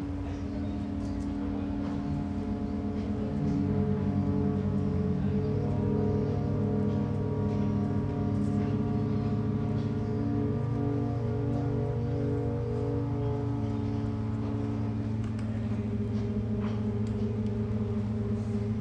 Leigthon, Valparaíso, Chile - distant ship horns
distant ship horns
Región de Valparaíso, Chile